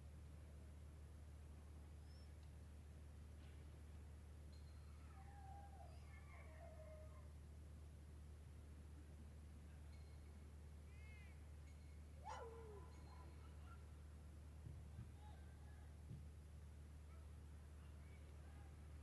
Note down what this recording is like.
The ambiance and dog sounds of the small village Niaqornat in the late evening. Recorded with a Zoom Q3HD with Dead Kitten wind shield.